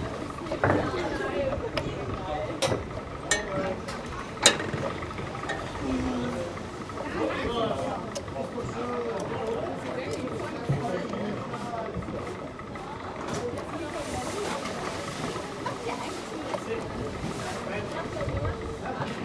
langenfeld, wasserskianlage - langenfeld, wasserski schwungrad
automtische wasserskianlage, nachmittags
hier: schwungrad mit transport der haltebügel
soundmap nrw - sound in public spaces - in & outdoor nearfield recordings